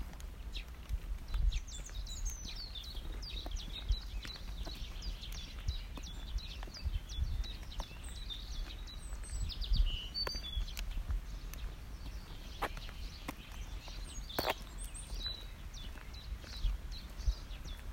Hradišťská, Velehrad, Česko - Lazy afternoon in Velehrad
I was on a walk with my 10 months old nephew, trying to make him fall asleep. I use to walk him there quite often and even now, when the spring is in bloom, the walk was full of sounds - birds, bees, horses by the side, water and sometime you can hear my nephew blabbing. Wish you would be there with me!